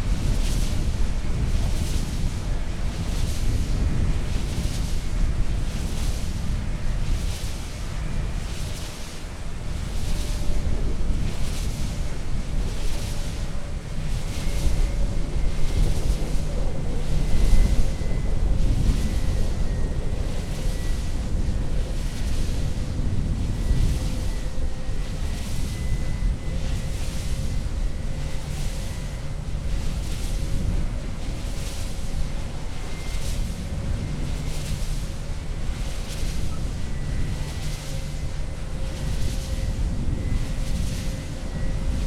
{"title": "field east from Wicko - wind turbine", "date": "2015-08-16 00:04:00", "description": "standing under a huge wind turbine at midnight. it was totally dark, only a red blinking warning light at the top of the tower poorly illuminated the structure and the ground around it. the swoosh of the enormous propeller together with the ripping wind were breathtaking and pretty scary. at the same time the sound was very hypnotic. the wail of the turbine went up and down as if a plane was landing and taking off.", "latitude": "54.68", "longitude": "17.63", "altitude": "20", "timezone": "Europe/Warsaw"}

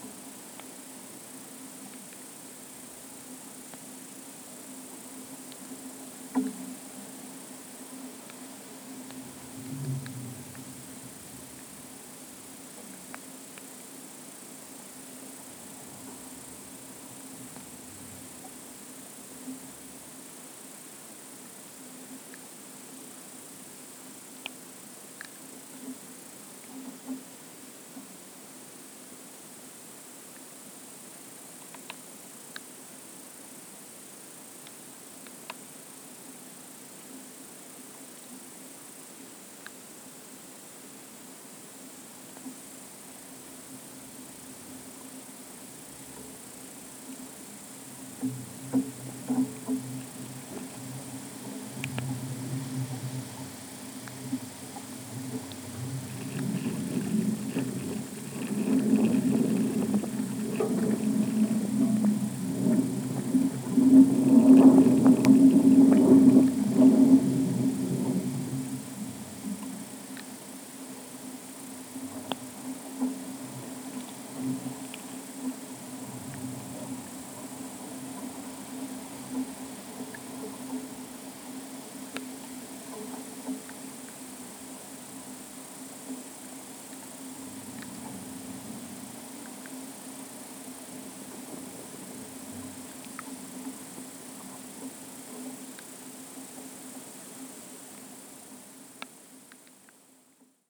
{"title": "wires grown into a maple", "date": "2011-07-18 20:10:00", "description": "this maple tree in Southern Estonia houses a frog and a hornet nest. metal cord tied around its trunk have now grown into its bark. contact mics on the cords deliver the evening wind and perhaps there are hints of the wasp nest action in the left ear...\nrecorded for WLD: world listening day 2011", "latitude": "57.67", "longitude": "26.97", "altitude": "207", "timezone": "Europe/Tallinn"}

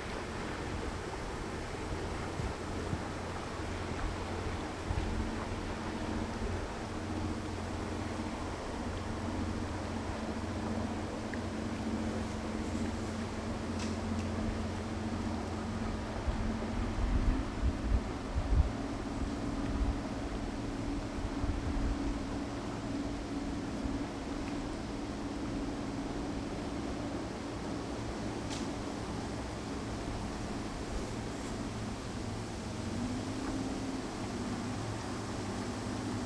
{"title": "berlin wall of sound, tree & water sounds on the mauerweg", "latitude": "52.43", "longitude": "13.12", "altitude": "45", "timezone": "Europe/Berlin"}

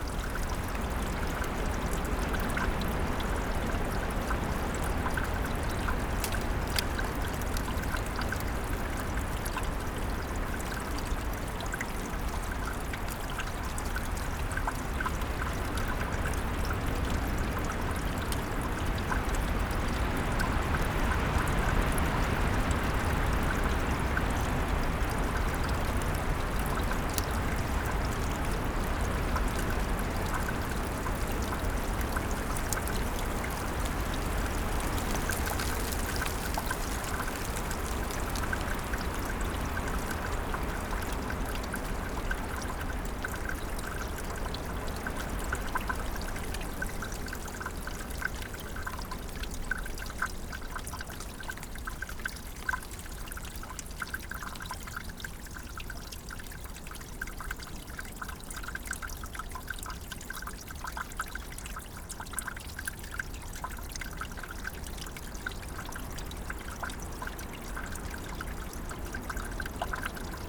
{"title": "Clavas, Riotord, France - little stream water in the storm", "date": "2019-01-05 23:00:00", "description": "Little Stream water under an ice cover, further sounds of a snow storm.\nTemp -4°C, 50Km/h Wind from north, little snow fall, alt 1200m\nRecording gear : Zoom H6 with DPA 4060 in a blimp (quasi binaural)", "latitude": "45.22", "longitude": "4.47", "altitude": "1090", "timezone": "GMT+1"}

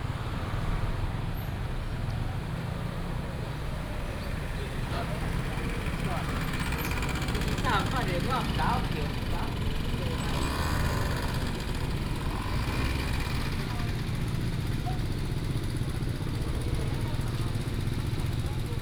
{"title": "莿桐鄉零售市場, Citong Township - Walking in the market", "date": "2017-03-01 09:51:00", "description": "Walking in the market, From the outdoor market into the indoor market, Traffic sound", "latitude": "23.76", "longitude": "120.50", "altitude": "41", "timezone": "Asia/Taipei"}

